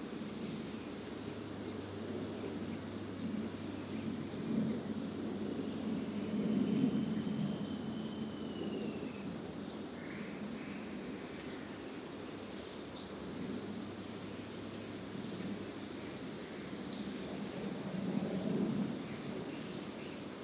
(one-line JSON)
{"title": "Parque da Cantareira - Núcleo do Engordador - Trilha da Mountain Bike - i", "date": "2016-12-20 07:11:00", "description": "Register of activity in the morning.", "latitude": "-23.41", "longitude": "-46.59", "altitude": "898", "timezone": "GMT+1"}